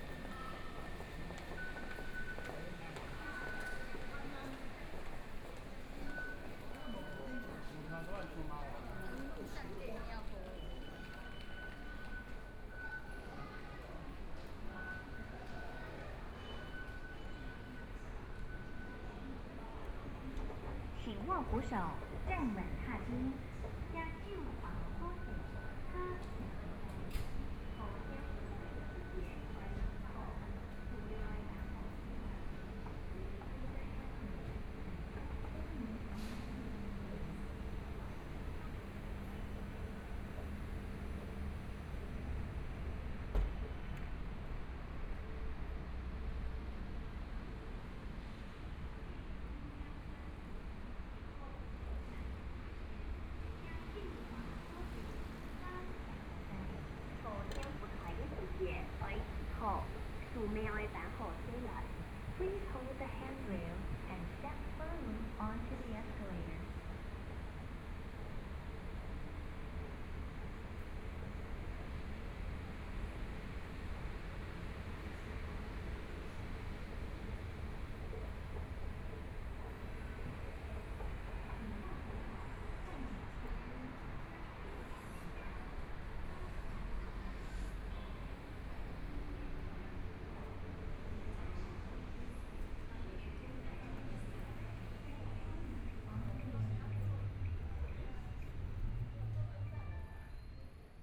中山區成功里, Taipei City - Walk to MRT station
Walk to MRT station, Traffic Sound
Please turn up the volume
Binaural recordings, Zoom H4n+ Soundman OKM II
Taipei City, Taiwan, 16 February 2014, 19:18